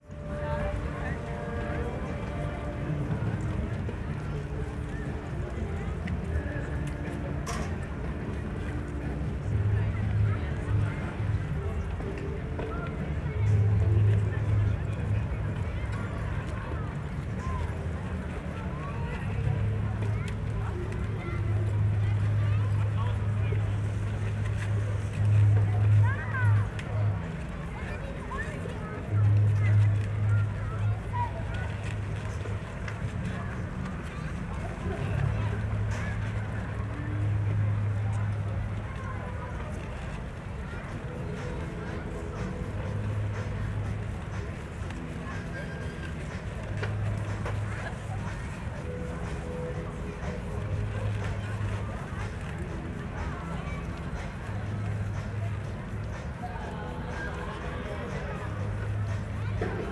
Hafen, Tempelhof, Berlin - harbour area, ambience
ambience above the tempelhof harbour. the area on this Saturday afternoon was dedicated to shopping and leisure activity
(SD702, DPA4060)